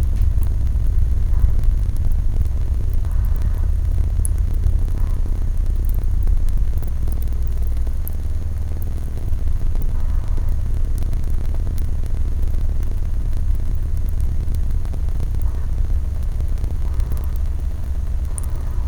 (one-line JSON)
{"title": "Poznan, Mateckiego Street, bathroom - water static", "date": "2012-10-27 21:10:00", "description": "a weak flux of water hitting the sink. mics placed millimeters from the hitting point. water continuously hitting the surface of the sink creates beautiful, intricate static sounds.", "latitude": "52.46", "longitude": "16.90", "altitude": "97", "timezone": "Europe/Warsaw"}